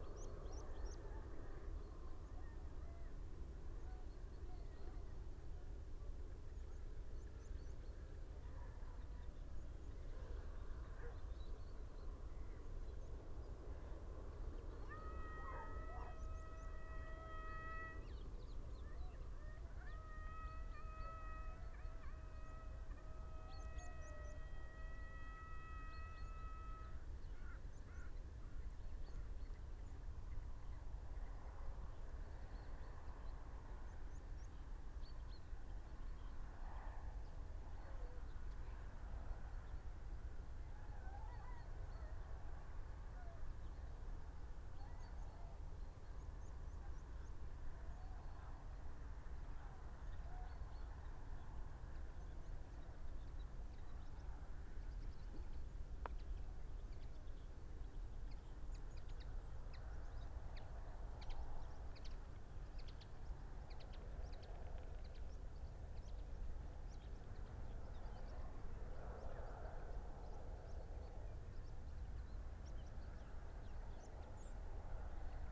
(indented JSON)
{
  "title": "urchins wood, ryedale district ... - horses and hounds ...",
  "date": "2019-09-30 07:58:00",
  "description": "horses and hounds ... parabolic ... bird calls ... goldfinch ... dunnock ... red-legged partridge ... crow ... pied wagtail ... meadow pipit ... and although distant ... some swearing ...",
  "latitude": "54.12",
  "longitude": "-0.56",
  "altitude": "118",
  "timezone": "Europe/London"
}